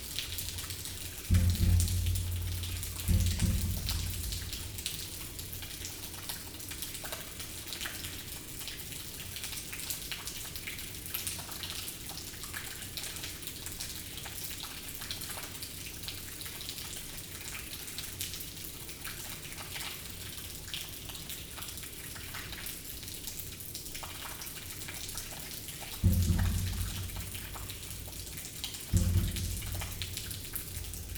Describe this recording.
Into the Valenciennes sewers, sounds of the water raining from everywhere. To be here is the best Christmas day ever !